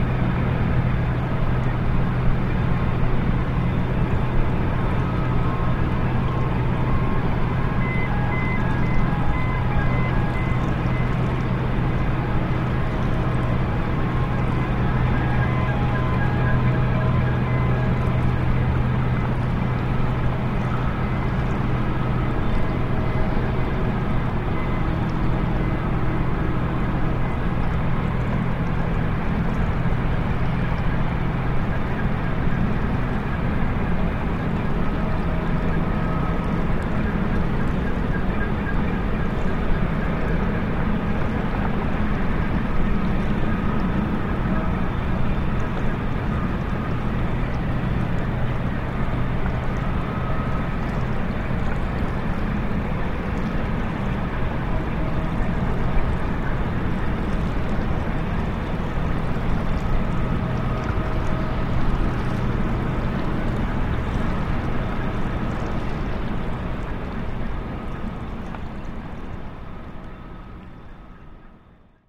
Dunkerque Braek Arcelor coke steel - DK Braek Arcelor coke steel
Dunkerque, Digue du Braek, opposite the Arcelor coking plant and oxygen steel plant. 2 x Behringer B2 Pro, EMU 1616m.